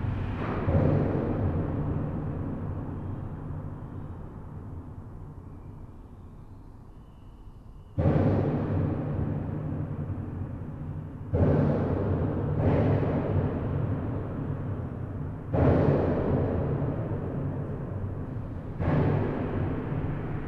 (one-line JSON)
{"title": "Theux, Belgium - Inside the bridge", "date": "2018-06-22 20:30:00", "description": "Recording of the technical tunnel of the Polleur bridge : I'm not on the motorway but below, not on the bridge but inside. It's a extremely noisy place, especially when trucks drive on the expansion joint ; moreover elastomer padding are missing.", "latitude": "50.54", "longitude": "5.88", "altitude": "244", "timezone": "Europe/Brussels"}